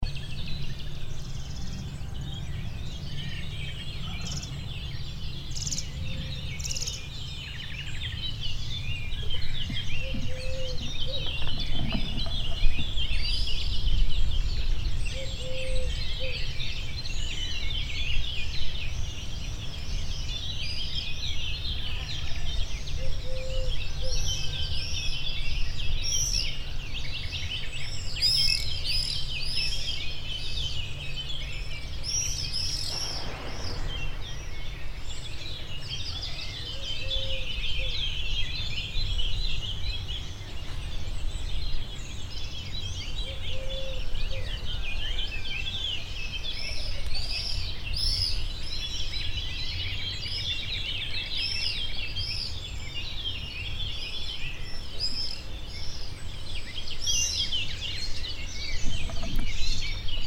Via S. Francesco, Serra De Conti AN, Italia - bird soundscape
in the small street at the beginning of the stairs where there are no house and you can properly hear the sound from the wood.
(xy: Sony PCM-D100)
Serra De Conti AN, Italy, 26 May 2018